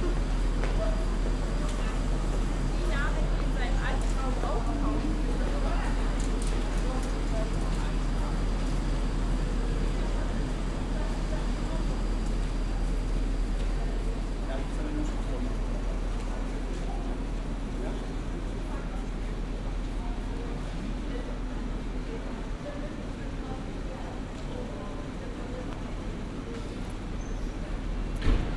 soundmap nrw: social ambiences/ listen to the people - in & outdoor nearfield recordings
hier - bahnhof ambiencen